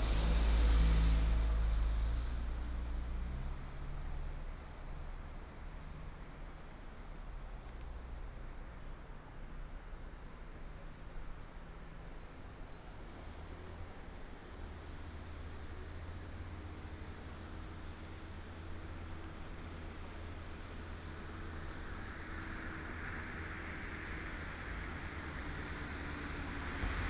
內灣大橋, Hengshan Township - next to the bridge
On the bridge, Traffic sound, stream